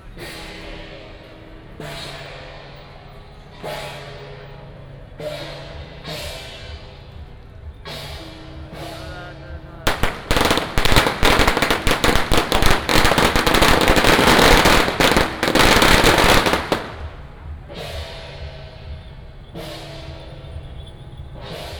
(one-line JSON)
{"title": "Shuidui St., Tamsui Dist. - Temple fair", "date": "2017-03-23 14:46:00", "description": "temple fair, Fireworks and firecrackers", "latitude": "25.18", "longitude": "121.44", "altitude": "45", "timezone": "Asia/Taipei"}